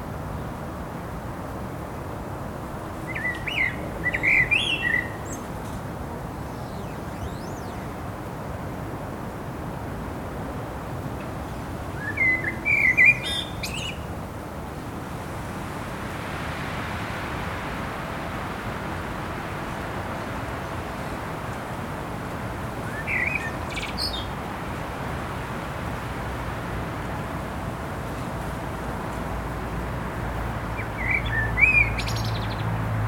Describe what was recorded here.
bird song, traffic noise, car, walker, city noise, cyclist, Captation : Zoomh4n